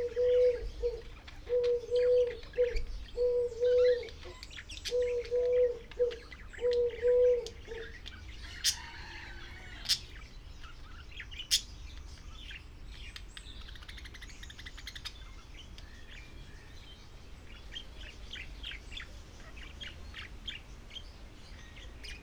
Botanischer Garten, Philosophenweg, Oldenburg - roosters, pidgeons, a water pump
Oldenburg, botanical garden, a place in the shadow behind the bird house, between a aquarium with an Axolotl and the birdhouse. Two roosters communicating.
(Sony PCM D50, Primo EM172)
Oldenburg, Germany